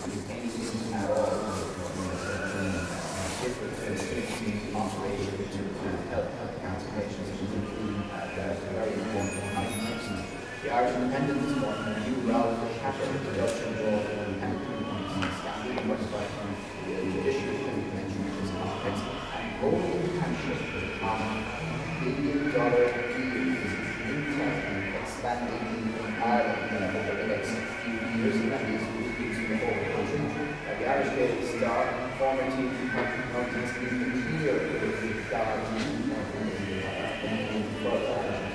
Kilmurray Lodge, Castletroy, Co. Limerick, Ireland - Radio broadcast in Hotel Lobby
I was staying in a hotal in Limerick for a conference and was waiting in the lobby for my colleague, Paul Whitty, when a local radio station turned up to broadcast from the lobby. There was music playing in the kitchen area, combined with the strange time delay of the broadcast being both produced live in the space, and running through the speakers. Another colleague turned up wearing an extremely rustly jacket. Someone texted me. A bricolage of crazy sounds at the start of a day of soundartpolemic: the noise of broadcast and reception, piped music, cutlery being tidied away, people fidgeting, phones going off, and waiting.